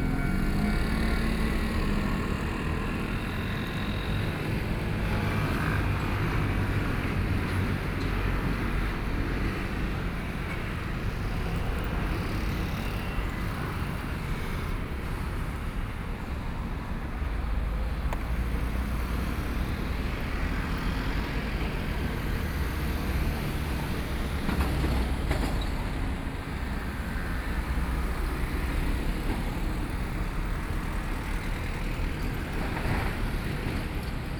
Sec., Zhongxing Rd., 五結鄉四結村 - At railroad crossing
At railroad crossing, Traffic Sound, Trains traveling through
Sony PCM D50+ Soundman OKM II